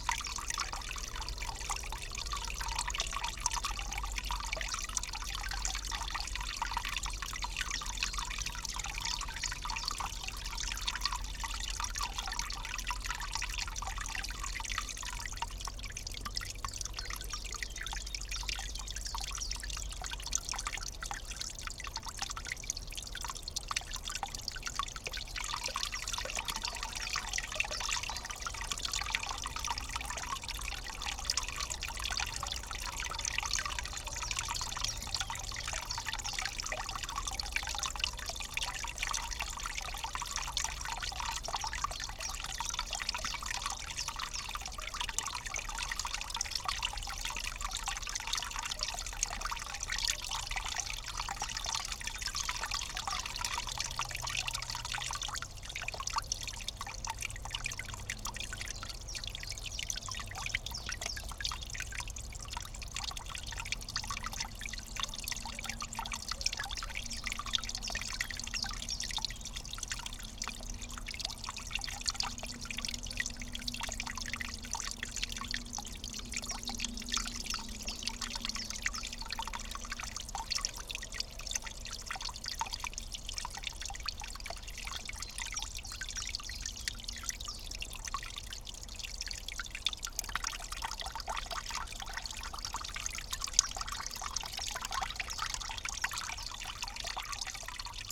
low flying plane interruptin my recordings at new built beavers dam
Utena, Lithuania, 24 April 2018, ~11:00